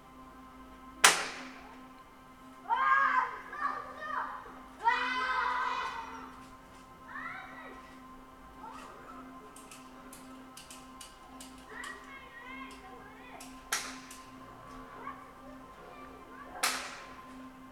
Lithuania, Utena, children play war
recorded through the kitchen window. children play war outside and Phill Niblock CD is playing in my room. drone and toy guns